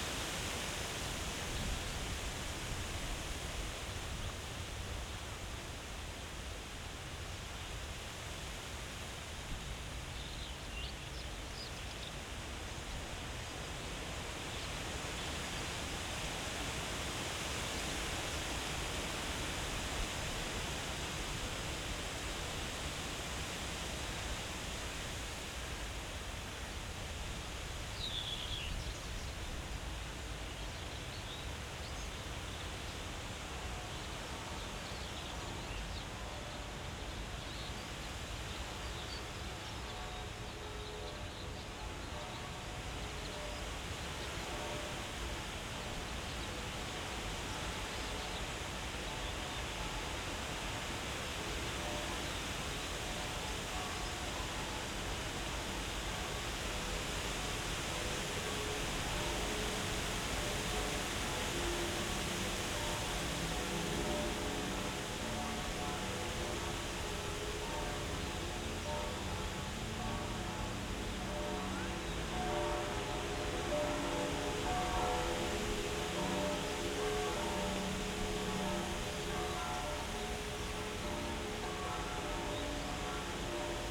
Tempelhofer Feld, Berlin, Deutschland - wind in poplar trees, church bells
place revisited on a hot summer day
(SD702, AT BP4025)
Berlin, Germany, 9 June 2014, 10:55